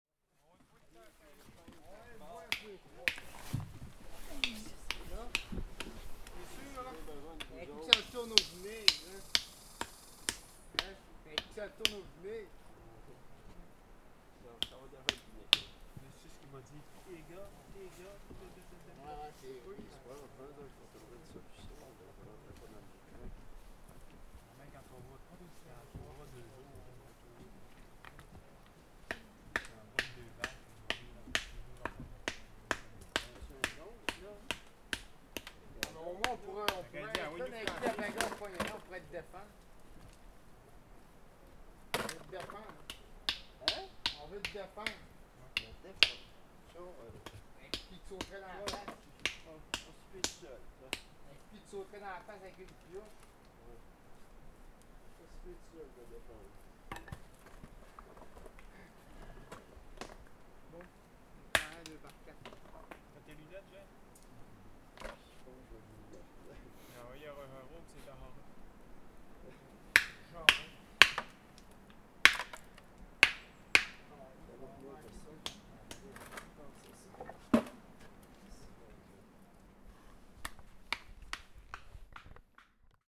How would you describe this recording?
Trail makers craft a new trail through the hills alongside the Saguenay Fjord, working with granite they hammer to fill in between each step.